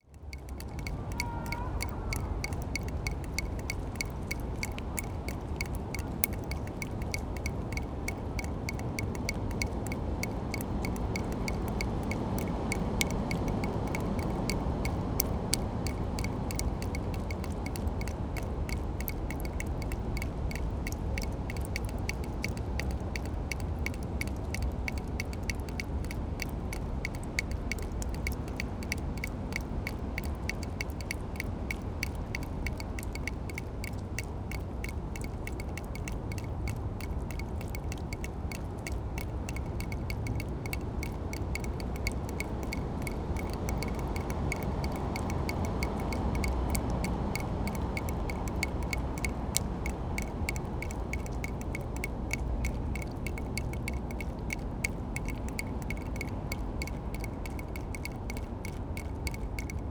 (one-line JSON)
{"title": "Prague, under Most Legií - drain pipe dripping", "date": "2012-10-04 12:05:00", "description": "water drips from a drain pipe onto a pebble stone. recorded during the Sounds of Europe Radio Spaces workshop.", "latitude": "50.08", "longitude": "14.41", "altitude": "191", "timezone": "Europe/Prague"}